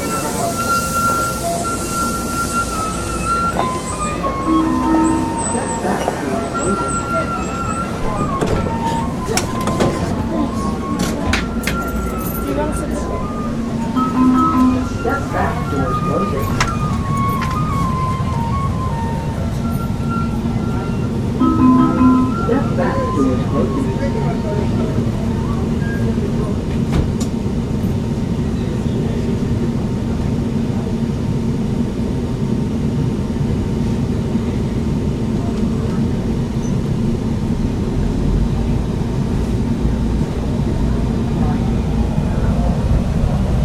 Metro Center Station DC
Flute player, metro train, commuter crowds
Washington, DC, USA, 16 September 2010, ~17:00